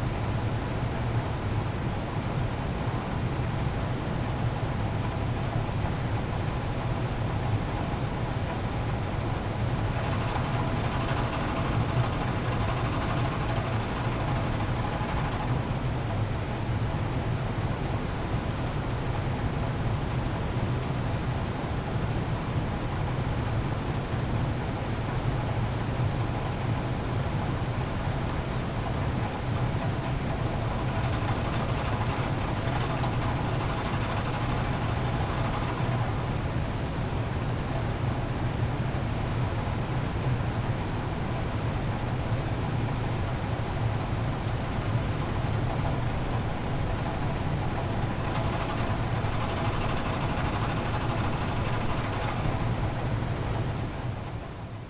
ventilation shaft from an oil shale mine 70+ meters below